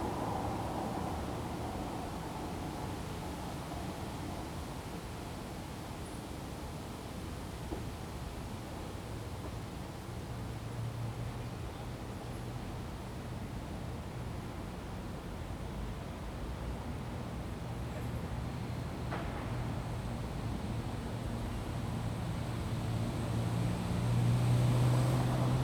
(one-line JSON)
{"title": "Winterfeldtplatz, Berlin, Deutschland - Winterfeldtplatz (Evening)", "date": "2013-09-23 19:00:00", "description": "evening on the winterfeldtplatz in berlin-schöneberg. you can hear the wind in the trees and the bells of the church ringing 7PM.", "latitude": "52.50", "longitude": "13.36", "altitude": "39", "timezone": "Europe/Berlin"}